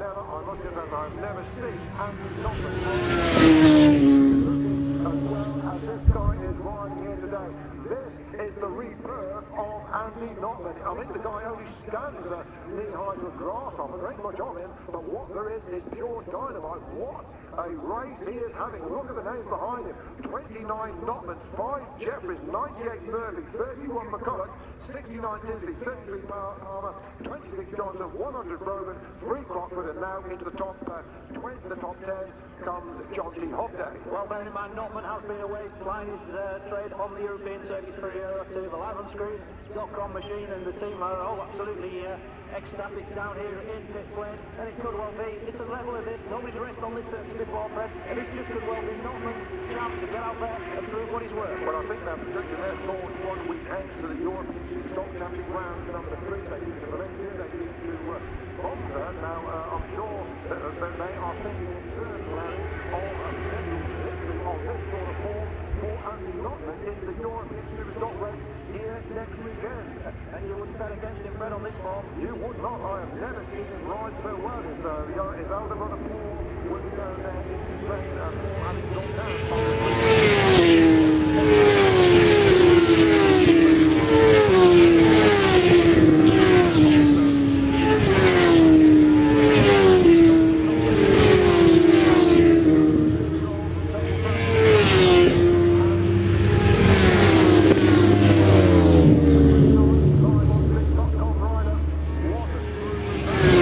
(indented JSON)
{
  "title": "Silverstone Circuit, Towcester, United Kingdom - world endurance championship 2002 ... superstock ...",
  "date": "2002-05-19 12:10:00",
  "description": "fim world enduance championship 2002 ... superstock support race ... one point stereo mic to minidisk ...",
  "latitude": "52.07",
  "longitude": "-1.02",
  "altitude": "152",
  "timezone": "Europe/London"
}